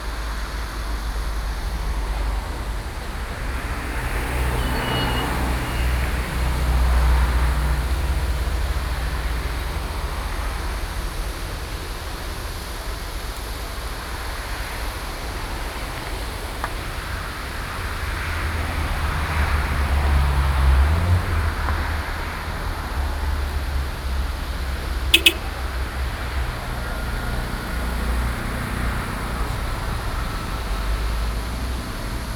{"title": "中角海濱公園, New Taipei City - Traffic noise", "date": "2012-06-25 18:15:00", "description": "Traffic noise, Sony PCM D50 + Soundman OKM II", "latitude": "25.24", "longitude": "121.63", "altitude": "5", "timezone": "Asia/Taipei"}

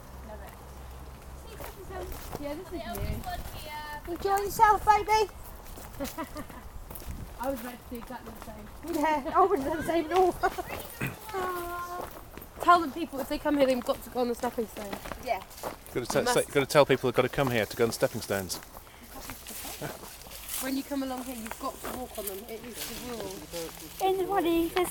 {"title": "Walk Three: Efford Valley Spring", "date": "2010-10-04 16:21:00", "latitude": "50.39", "longitude": "-4.11", "altitude": "53", "timezone": "Europe/London"}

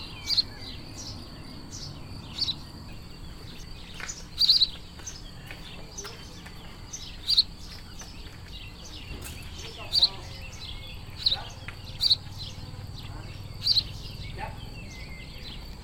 {"title": "Vions, France - An hour in Vions village with sparrows, during a long summer evening", "date": "2017-06-11 20:30:00", "description": "We are in a small village of Savoy, France. A bucolic garden focus everything beautiful and pleasant you can think about evoking a warm summer evening. After a tiring very hot day, crushed by weariness, come with us, rest on the terrace under the linden tree. Gradually a delicate freshness returns. You will be cradled by the sparrows, and progressively arrives the summer months nightlife : frogs and locusts.\nAu sein de ce petit village, un écart bucolique comporte tout ce qu'il peut exister de beau et d'agréable en une belle soirée chaude d'été. Après une journée harassante de chaleur et écrasé par la fatigue, venez vous reposer sur la terrasse, sous le tilleul, avec peu à peu une sensible fraicheur qui revient. Vous serez bercés par les piaillements des moineaux, qui graduellement s'éteignent en vue de laisser la place à la vie nocturne des mois estivaux : les grenouilles et les criquets.", "latitude": "45.82", "longitude": "5.81", "altitude": "236", "timezone": "Europe/Paris"}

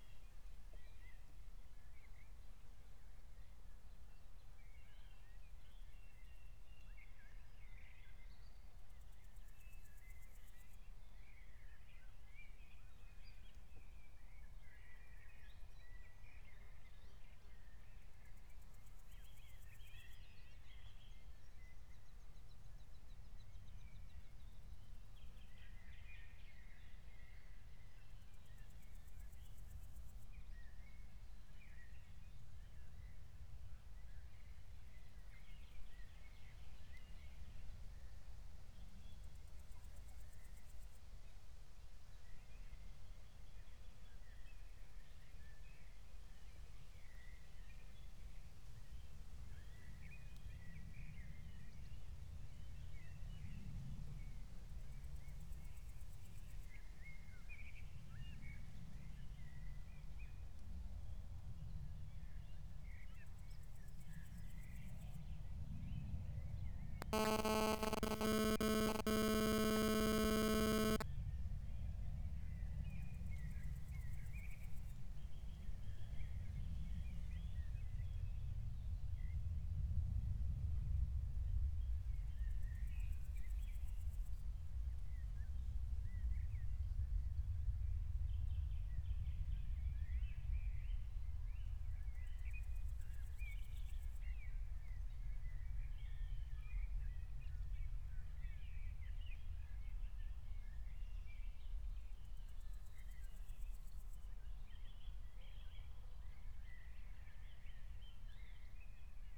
{"title": "Berlin, Buch, Mittelbruch / Torfstich - wetland, nature reserve", "date": "2020-06-18 18:16:00", "description": "18:16 Berlin, Buch, Mittelbruch / Torfstich 1", "latitude": "52.65", "longitude": "13.50", "altitude": "55", "timezone": "Europe/Berlin"}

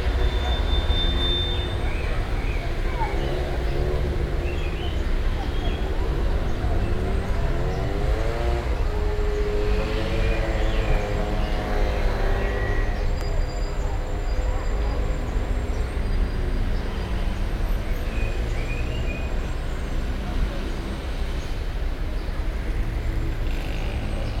{"title": "Tanger, St Andrew church cemetery", "date": "2011-04-04 15:00:00", "latitude": "35.78", "longitude": "-5.81", "altitude": "65", "timezone": "Africa/Casablanca"}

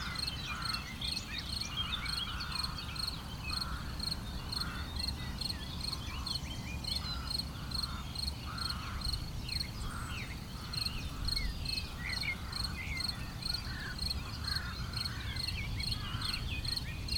{"title": "Grozon, France - Into the fields", "date": "2017-06-15 07:10:00", "description": "Into the field early on the morning, sound of the wind, a few background noise of the road, and sometimes a discreet (so beautiful) Yellowhammer.", "latitude": "46.87", "longitude": "5.71", "altitude": "360", "timezone": "Europe/Paris"}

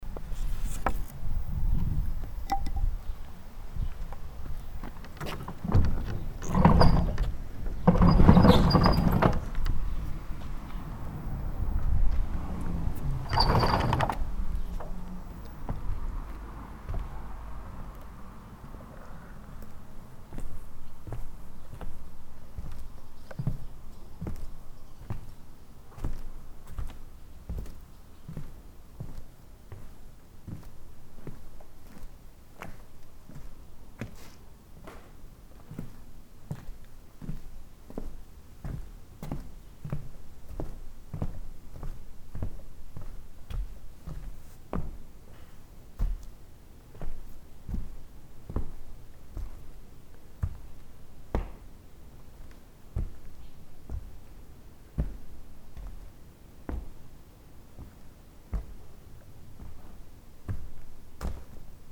{"title": "Grass Lake Sanctuary - Barn Sounds", "date": "2010-07-18 01:23:00", "description": "The barn was built in the late 1800s. These are the sounds of me pushing open the door and walking around inside...", "latitude": "42.24", "longitude": "-84.06", "altitude": "299", "timezone": "America/Detroit"}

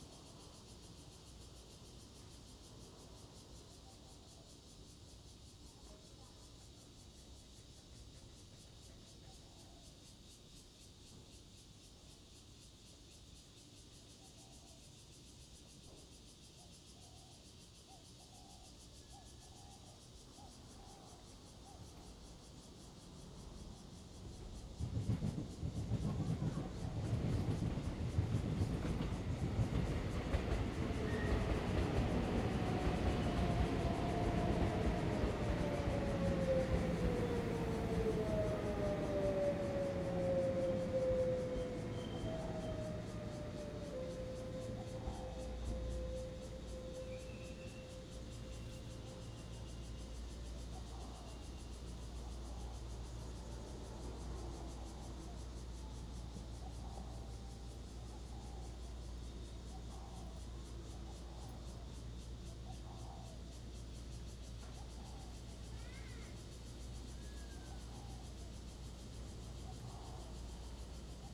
{"title": "鹿野村, Luye Township - Next to the station", "date": "2014-09-07 09:00:00", "description": "Birdsong, Traffic Sound, Next to the station, small village\nZoom H2n MS +XY", "latitude": "22.91", "longitude": "121.14", "altitude": "137", "timezone": "Asia/Taipei"}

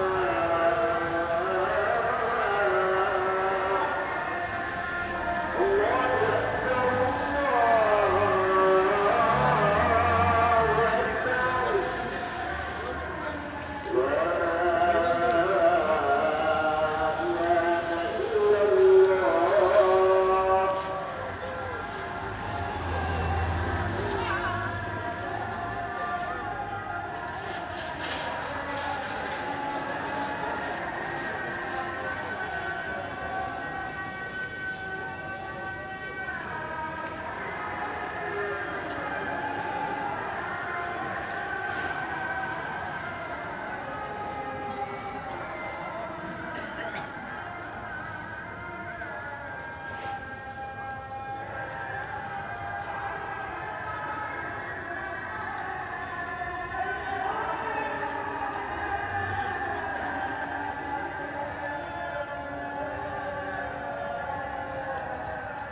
{"title": "Multiple Adhan calls in the golden horn", "date": "2010-02-10 15:12:00", "description": "I stopped to record a noisy flute sound when suddenly many Adhan calls broke out", "latitude": "41.01", "longitude": "28.97", "altitude": "60", "timezone": "Europe/Tallinn"}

